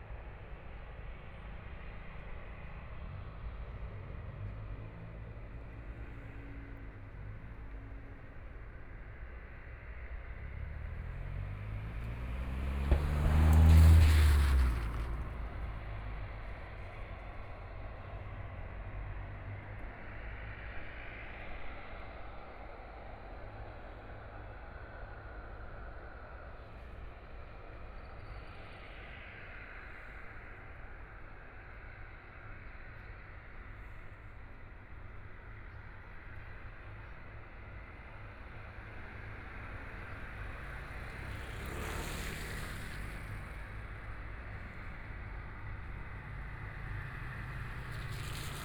中山區大佳里, Taipei City - aircraft noise
Landing and takeoff of aircraft noise, Traffic Sound, Aircraft flying through, Binaural recordings, ( Keep the volume slightly larger opening )Zoom H4n+ Soundman OKM II